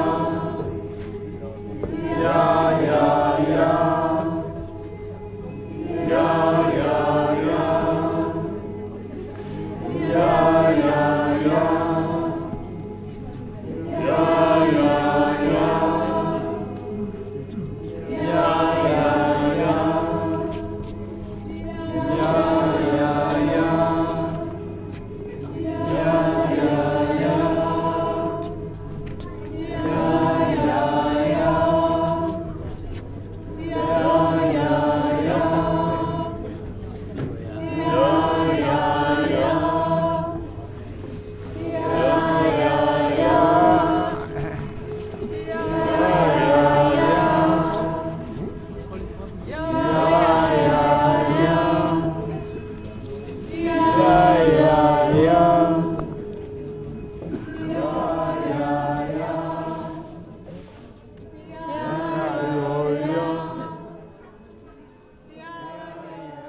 cologne, filmhaus, filmhaus choir
first performance of the cologne based filmhaus choir conducted by guido preuss - here with involved audience humm walk - recording 03
soundmap nrw - social ambiences and topographic field recordings
Deutschland, European Union